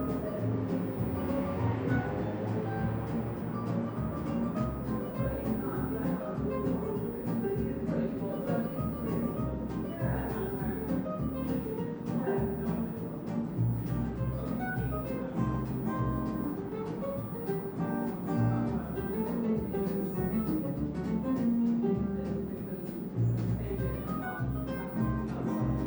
Theatre Cafe, Malvern, UK - Theatre Cafe
A long real time recording experience. I am in the large cafe of the theatre late on a cold afternoon. On the left a girl behind the counter is busy, in front two ladies talk and a man carries glasses to lay tables on the right. Various people pass by. Eventually I finish my cake and coffee and walk over to watch a video with music then out into the street where a busker plays a recorder. Finally I walk down an alley to the car park followed by a woman pushing a noisey shopping trolley.
MixPre 6 II with two Sennheiser MKH 8020s in a rucksack.